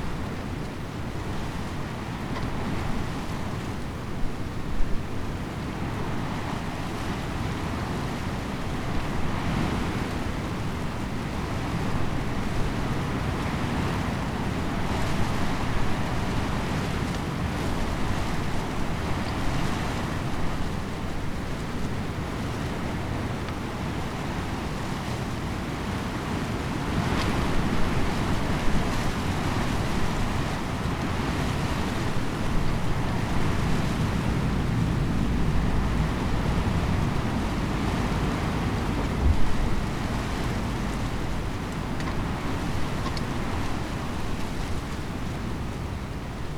stormy day (force 7) at the monument on the red cliffs, which reminds the battle of warns in 1345
wind blown elder tree
the city, the country & me: june 24, 2013